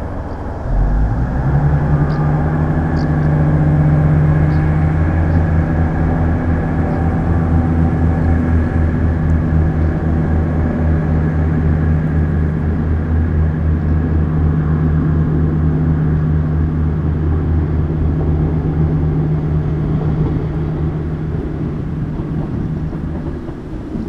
Mariembourg, Rue de lAdoption, Abandonned Employment house - le FOREM abandonné